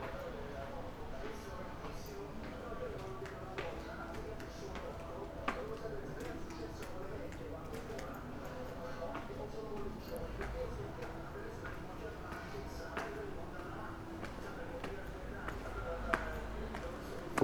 stairs, Via Giacomo Ciamician, Trieste, Italy - night walkers
7 September 2013